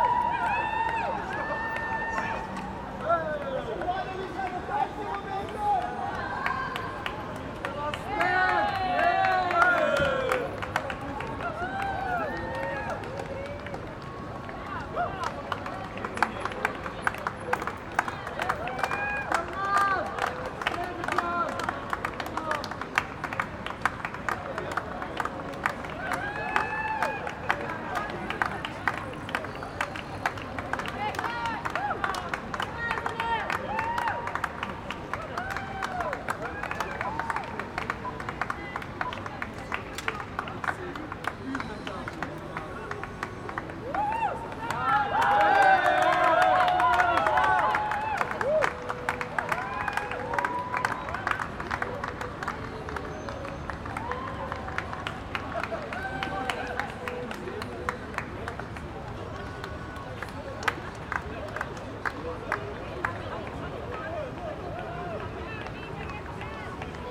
Frankrijklei, Antwerpen, Belgium - Antwerp Night Marathon
This is a continuous recording of the crowds cheering on the runners of the Antwerp Night Marathon, and some of the honking of frustrated car drivers stuck in a traffic jam on the other side of the street. I used a Sony PCM-D100 for this and exported with minimal processing.
Vlaanderen, België / Belgique / Belgien, 11 September